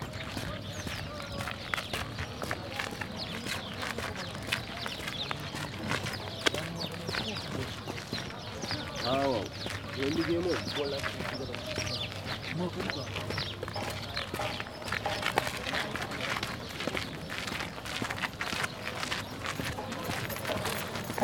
berlin, paul-lincke-ufer: promenade - walk from Ohlauer to Kottbusser bridge
Springtime by the canal!
Do the birds only feel louder because all perception has changed in times of the pandemic corona virus? Or are they actually louder?
Many people - like me - seem to take breaks from home office/schooling/etc to take a walk alone or with one other person.
The topic of 90 % of the conversations is the virus and its effects.
Recorded on the sunny side of the canal in the afternoon, using a Sony PCM D100